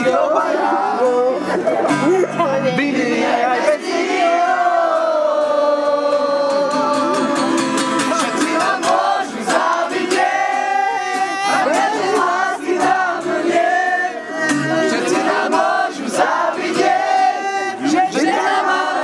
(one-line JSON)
{"title": "Štós, Slovakia - Slovenska Utopia", "date": "2011-06-26 01:26:00", "description": "Glimpses of a seemingly utopian society: Slovaks and Roma spontaneously jamming and singing Czechoslovak pop classics, right on the main square of the eastern Slovak village of Štós during the project Kinobus 2011.", "latitude": "48.71", "longitude": "20.79", "altitude": "451", "timezone": "Europe/Bratislava"}